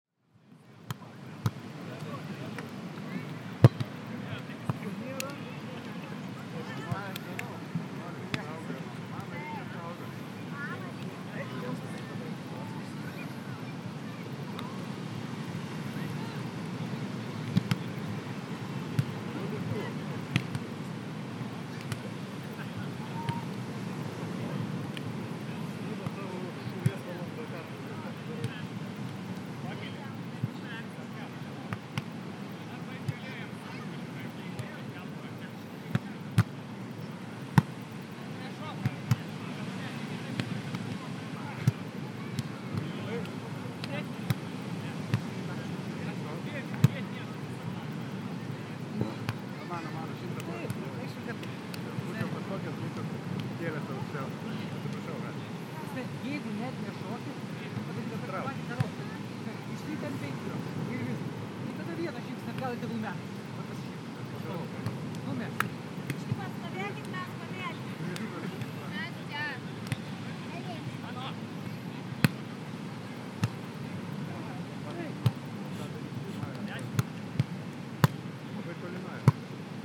Neringos sav., Lithuania - Beach Volleyball
Recordist: Tornike Khutsishvili
Description: On the beach on a clear day. People talking, playing volleyball, waves and crickets in the background. Recorded with ZOOM H2N Handy Recorder.
29 July 2016, 16:20